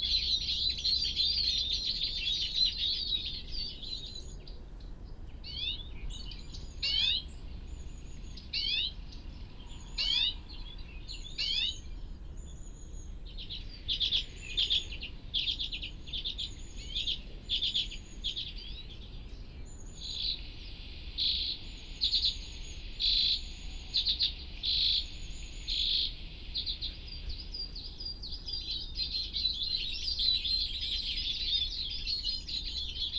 Cleveland Way, Filey, UK - sounds at a mist net ...
sounds at a mist net ... a bird ringing site ... a recording of a recording of a tape loop used to attract birds to the area ... parabolic ... background noise ... conversations from a dog owner ... plus the mating call of a reversing vehicle ...
England, United Kingdom, 15 October 2019